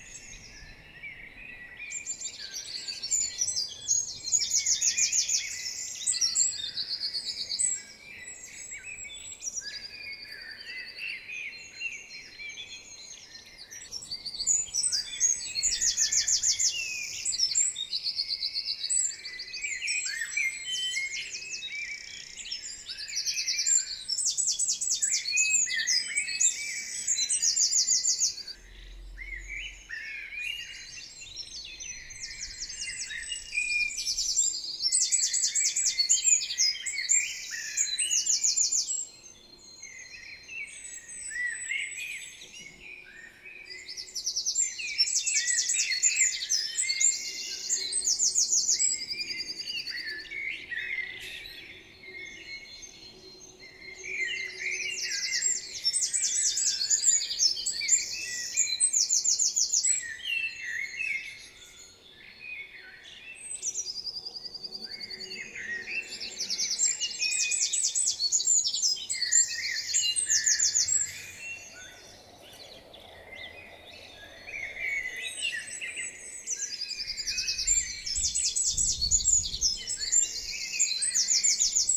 Mont-Saint-Guibert, Belgique - Birds on the early morning
Birds singing on the early morning. Spring is a lovely period for birds.
Common chaffinch, blackbird, greenfinch, european robin, and great tit.